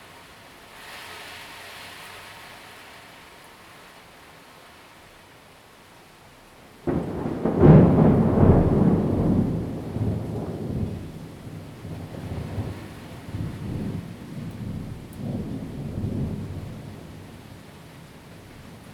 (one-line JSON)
{"title": "Rende 2nd Rd., Bade Dist. - Thunderstorms", "date": "2017-08-27 17:53:00", "description": "Thunderstorms, wind, rain, Zoom H2n MS+XY", "latitude": "24.94", "longitude": "121.29", "altitude": "141", "timezone": "Asia/Taipei"}